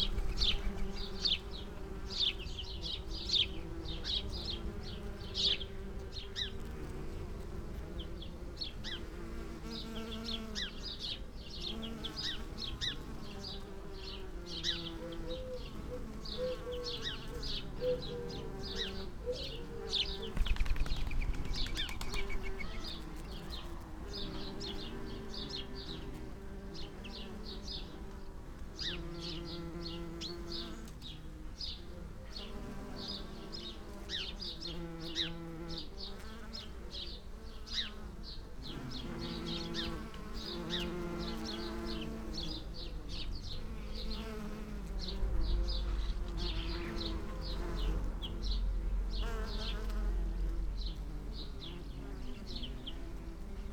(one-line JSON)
{"title": "Chapel Fields, Helperthorpe, Malton, UK - bees on lavender ...", "date": "2019-07-12 17:30:00", "description": "bees on lavender ... SASS placed between two lavender bushes ... bird calls ... song ... calls ... starling ... house sparrow ... dunnock ... chaffinch ... house martin ... collared dove ... blackbird ... background noise ... traffic ...", "latitude": "54.12", "longitude": "-0.54", "altitude": "77", "timezone": "Europe/London"}